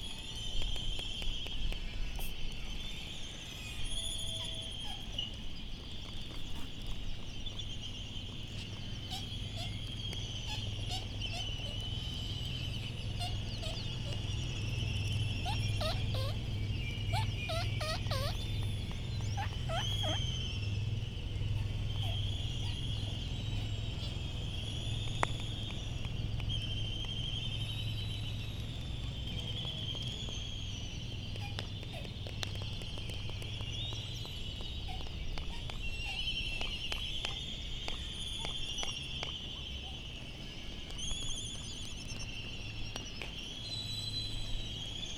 United States Minor Outlying Islands - Laysan albatross soundscape ...
Laysan albatross soundscape ... Sand Island ... Midway Atoll ... bird calls ... laysan albatross ... canaries ... bristle-thighed curlew ... open lavalier mics on mini tripod ... background noise ... Midway traffic ... handling noise ... some windblast ...